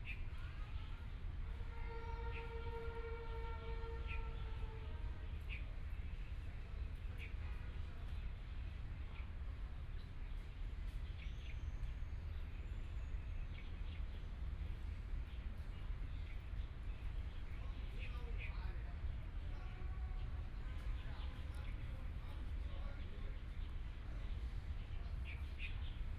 Yangpu Park, Yangpu District - Birds sound
Sitting under a tree, Birds singing, Binaural recording, Zoom H6+ Soundman OKM II